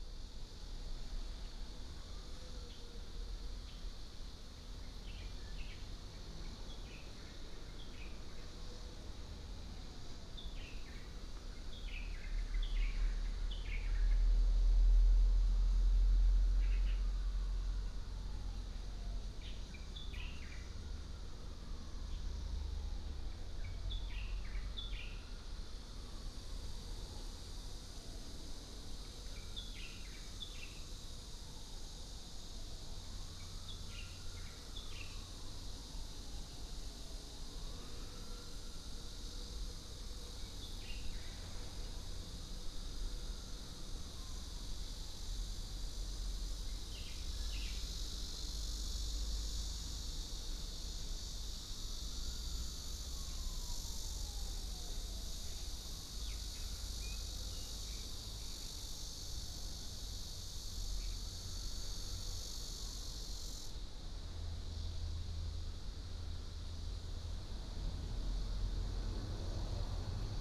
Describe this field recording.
in the Park, Birds sound, Cicada cry, traffic sound, The plane flew through